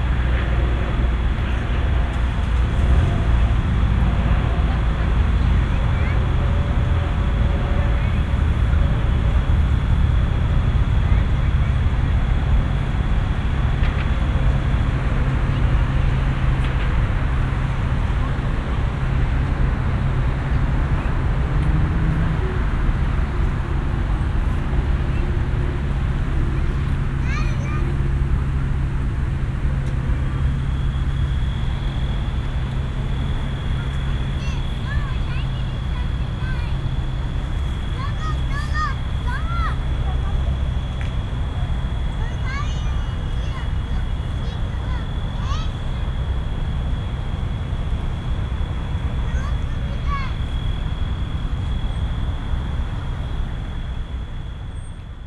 Montreal - Parc Jarry (cricket) - ground cricket in the trees
A ground cricket at Parc Jarry, in the trees along Blvd. St-Laurent.
Recorded for World Listening Day 2010.